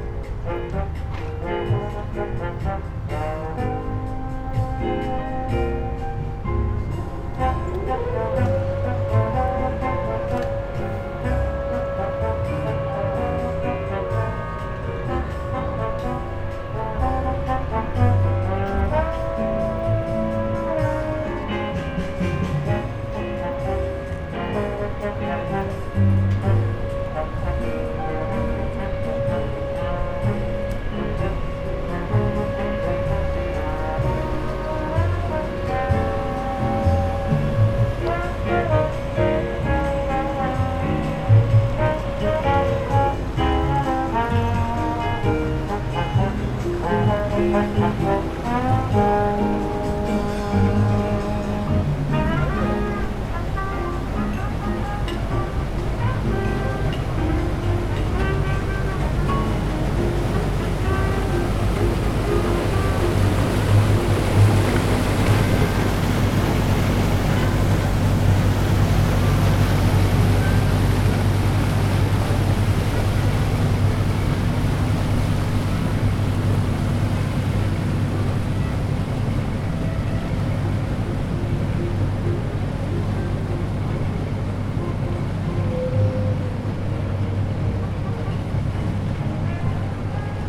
Rasinovo nabrezi, Music on the Boat
There are lots of boats on Rasinovo waterfront. Once in the evening I walked around and one boat just sailed away. It was like a movie scene. The boat band started to play, it was already past the sunset and one of the last warm days. The boat was almost empty. Just a few bored men in suits, the wind in their hair. The moment just before the boat blare is magic.